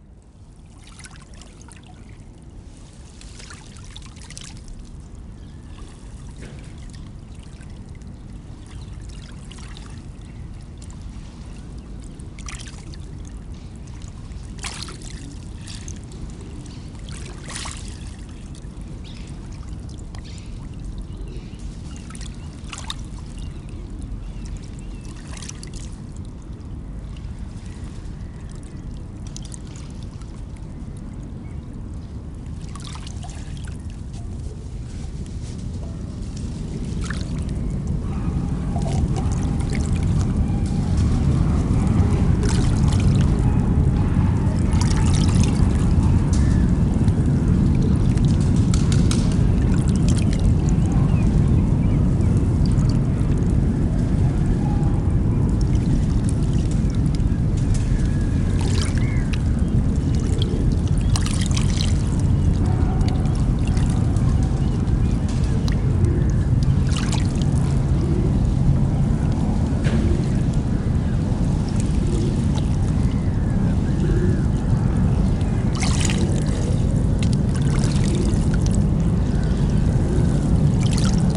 Greenwich, UK - Pigeons Nesting by the Lapping Thames
Recorded with a stereo pair of DPA 4060s and a Marantz PMD661.
London, UK, 24 January, 16:25